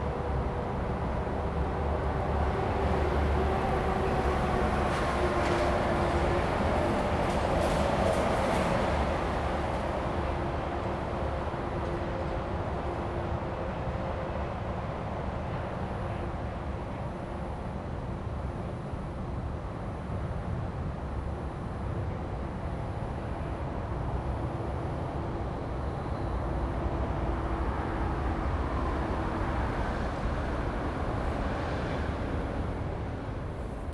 光復路二段2巷, East Dist., Hsinchu City - next to the highway
Early in the morning next to the highway, Zoom H2n MS+XY